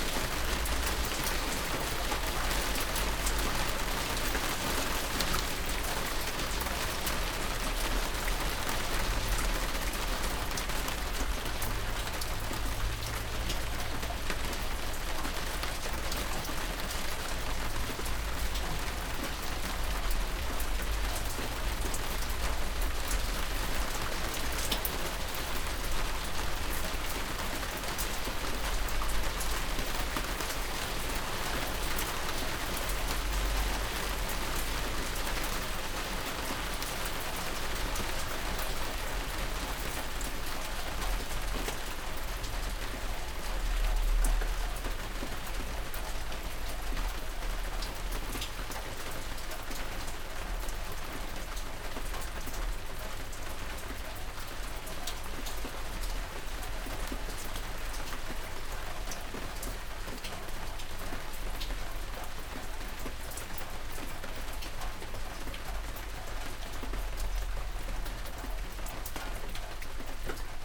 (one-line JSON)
{"title": "Pierres, France - Storm", "date": "2017-08-10 14:30:00", "description": "A small storm is falling on an outhouse. It's not long, but in a few time there's a lot of rain. Drops clatter on a big plastic pane.", "latitude": "48.59", "longitude": "1.58", "altitude": "112", "timezone": "Europe/Paris"}